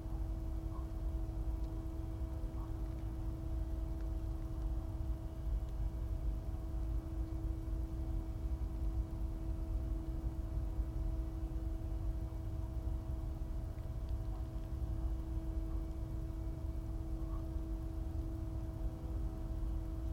Wind farm: a rotating humming generator in the green environment, cycles of birds, weather, distance; audio stream, Bernau bei Berlin, Germany - The becalmed drone continues with twangs and owls
There is light rustling in the leaves very close to the mics. Again unknown - maybe mice.
Brandenburg, Deutschland, 24 March 2021